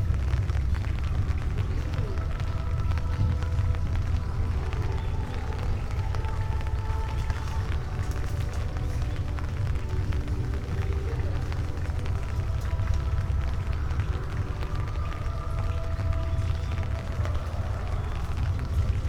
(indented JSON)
{
  "title": "pond, Kodai-ji zen garden, Kyoto - golden umbrella, borrowed, and left for another to borrow",
  "date": "2014-11-09 12:55:00",
  "description": "garden sonority, light rain",
  "latitude": "35.00",
  "longitude": "135.78",
  "altitude": "75",
  "timezone": "Asia/Tokyo"
}